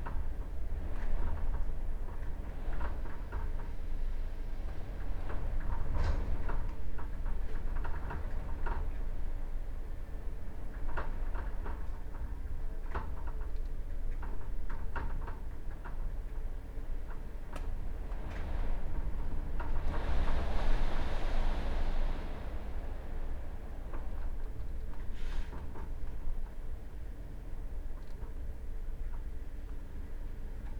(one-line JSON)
{"title": "Taranto, Italy - Xmas Storm", "date": "2013-12-26 17:30:00", "description": "Storm during St. Stephan's day. Recorded from inside my flat. Church bells playing really far.\nRoland R26\nXY+OMNI+Contact Mic (on the window)", "latitude": "40.40", "longitude": "17.26", "altitude": "24", "timezone": "Europe/Rome"}